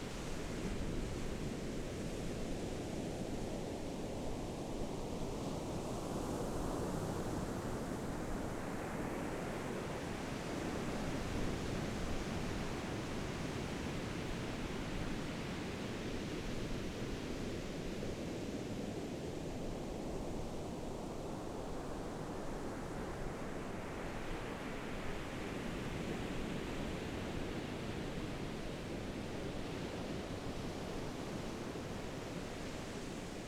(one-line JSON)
{"title": "坂里沙灘, Beigan Township - sound of the waves", "date": "2014-10-13 13:09:00", "description": "Sound of the waves, In the beach, Windy\nZoom H6 +Rode NT$", "latitude": "26.22", "longitude": "119.98", "altitude": "1", "timezone": "Asia/Taipei"}